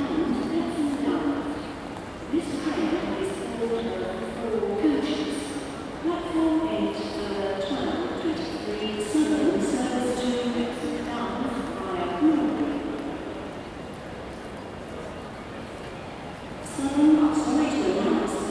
Victoria Line, London, UK - Victoria Station, London.
Arrival and departure announcements at Victoria Station, London. Recorded on a Zoom H2n.
17 August 2017, 12:15